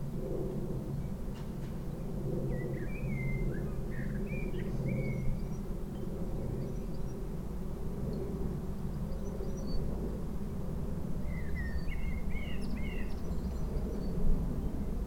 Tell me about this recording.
This recording was made by strapping my EDIROL R-09 onto the underside of a bird table with a cable tie. From there is picks up on the ceramic wind chimes that hang near the back door, the birdsong of the birds that hang out in a nearby Walnut tree and the surrounding hedges, the huge noise of planes passing on the flight path to Heathrow, some noises from vehicles on the nearby roads, a blackbird, and a general rumble of traffic. A couple of wood pigeons also sound in the recording, and there is a tapping sound, produced by the birds fetching seed off the table with their beaks.